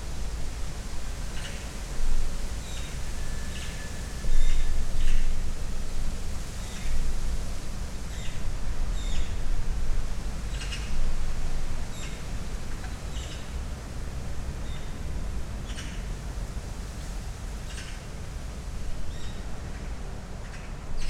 Brussels, Place Loix on a windy day.